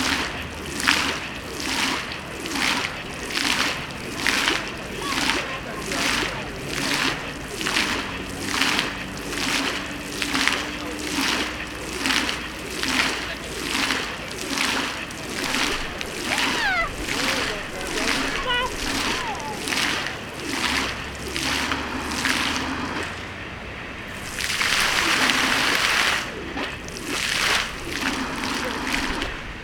Skwer 1 Dywizji Pancernej WP, Warszawa, Pologne - Multimedialne Park Fontann (c)
Multimedialne Park Fontann (c), Warszawa
17 August 2013, ~12:00